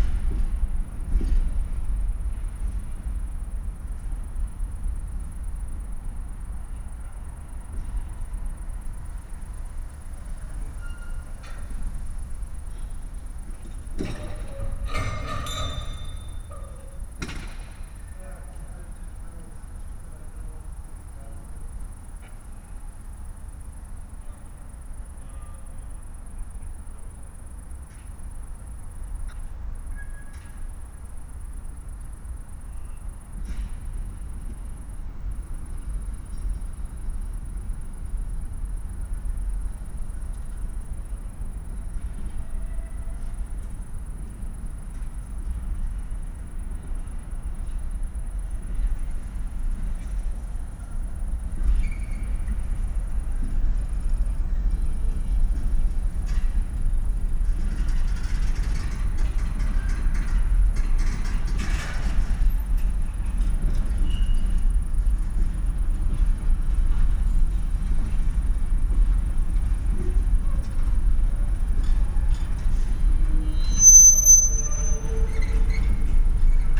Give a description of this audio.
heavy diesel engines move container waggons around, everything is vibrating